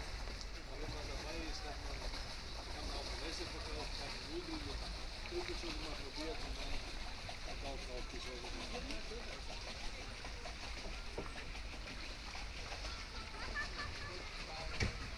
Neckar in Tübingen - late summer activities on the Neckar river in Tübingen
Spätsommeraktivitäten auf dem Neckar in Tübingen: Stocherkähne, Tretboote, Stehpaddler, Ruderboote. Kleine (aber laute) Leichtflugzeuge.
Late summer activities on the Neckar river in Tübingen: Punting boats, pedal boats, paddlers, rowing boats. Small (but loud) light aircraft.